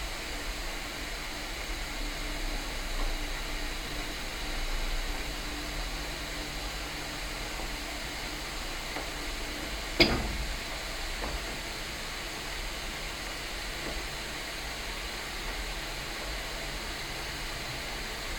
audresseles, rose des vents, wasserkocher
wasserkocher auf gasherd
fieldrecordings international: social ambiences/ listen to the people - in & outdoor nearfield recordings